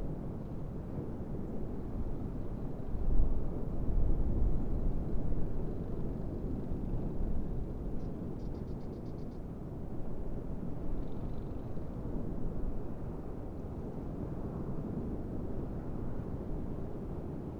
Gavà Mar
Airplanes rising over the sea waves on a nice windy day